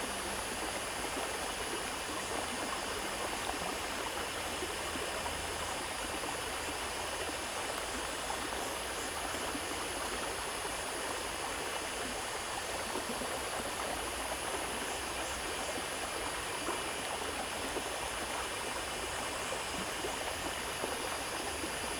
田份, 桃米里Puli Township - Cicadas and Flow sound
Irrigation channels, Cicadas sound, Flow sound
Zoom H2n MS+XY
Puli Township, 水上巷, 7 June 2016, 15:51